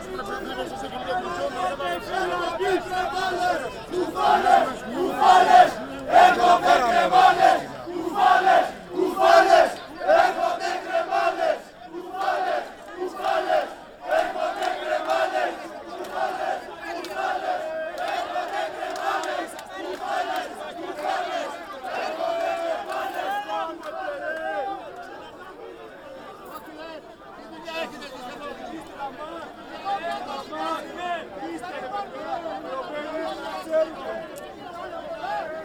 Athens. Protesters in front of the parliament - 05.05.2010